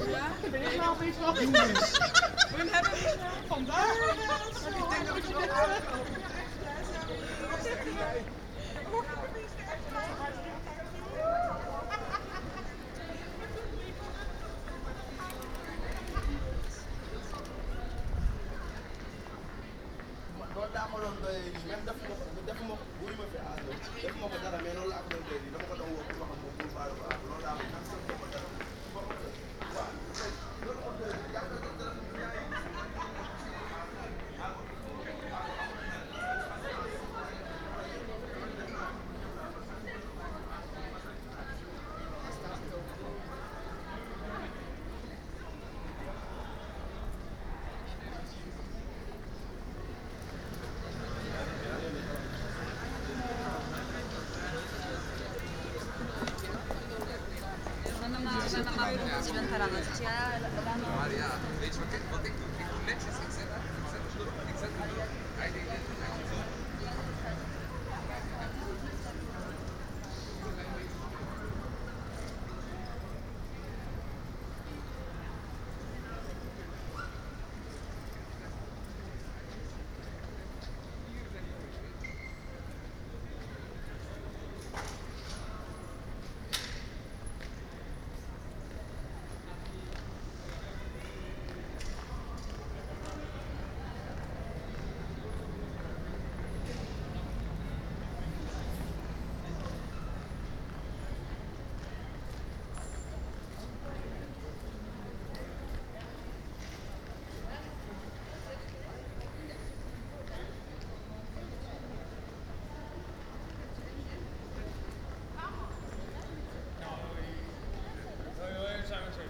A walk through the city (part 6 - nightlife) - The Hague Nightlife
A walk through the city center on Saturday evening. (Mainly recorded on Plein). Binaural recording.